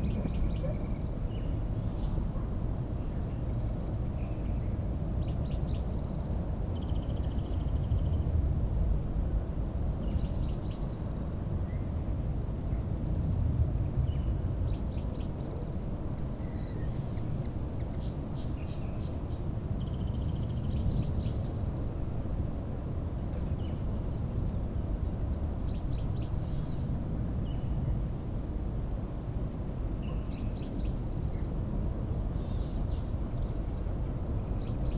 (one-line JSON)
{"title": "Rio Grande, Albuquerque, NM, USA - Always Live Your Dreams", "date": "2016-08-07 07:22:00", "description": "El bosque en Rio Grande accessed via Rio Grande Nature Center. Recording title taken from black marker graffiti on bench: \"Always Live Your Dreams.\" Recorded on Tascam DR-100MKII, edited for levels on Audacity.", "latitude": "35.13", "longitude": "-106.69", "altitude": "1520", "timezone": "America/Denver"}